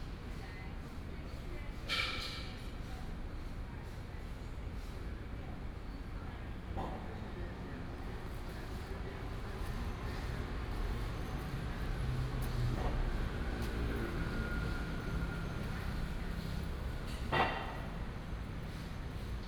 龍陣一號公園, Da’an Dist., Taipei City - in the Park
Morning in the park, Construction Sound